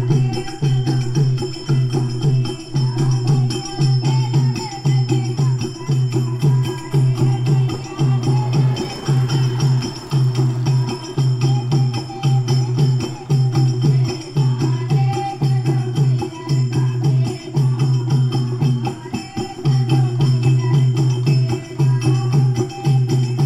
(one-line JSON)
{"title": "Pachmarhi, Madhya Pradesh, Inde - Song for Durga", "date": "2015-10-19 17:45:00", "description": "A group of women is seated in front of a temple. They sing a pray all together. A percussion accompanies the singers.", "latitude": "22.46", "longitude": "78.43", "altitude": "1067", "timezone": "Asia/Kolkata"}